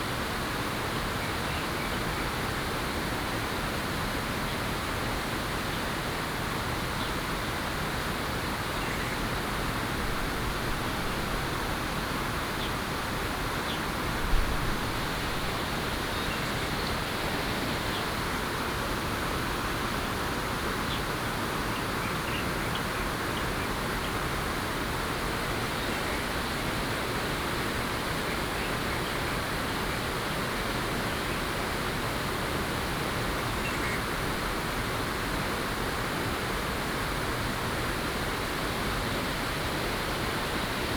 灰瑤子溪, Tamsui Dist., New Taipei City - Stream and Bird sounds
Stream and Bird sounds
Tamsui District, New Taipei City, Taiwan, 16 April 2016, ~08:00